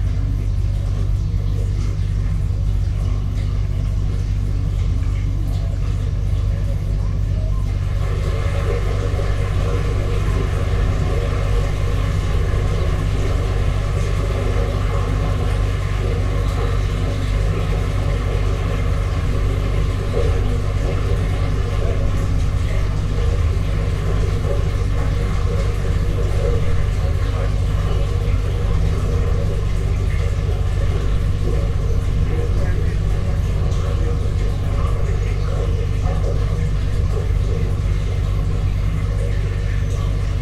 Trakai, Lithuania, listening in tube
small microphones in some ventilating tube going underground